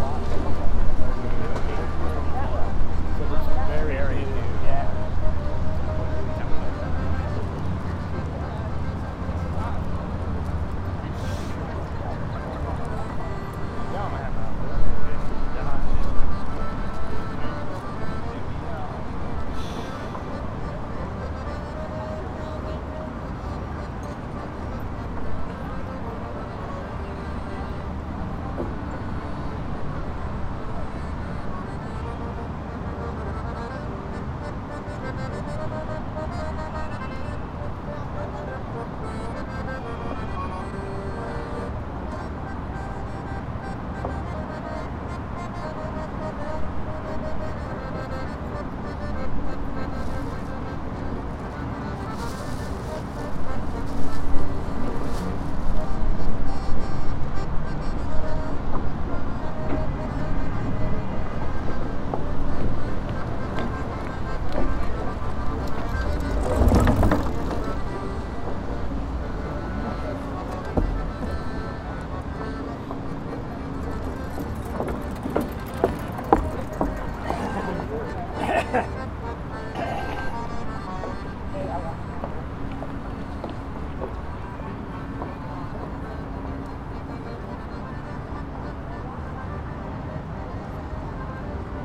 paris pont des arts, tourists, akkordeon, water
Paris, France, 22 June 2010